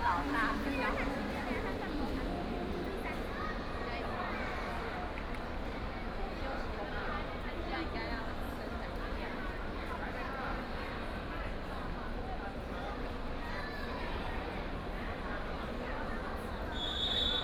Tamkang University, New Taipei City - Swimming Competition
Swimming Competition, Elementary school swim race, Sitting in the audience of parents and children, Binaural recordings, Zoom H6+ Soundman OKM II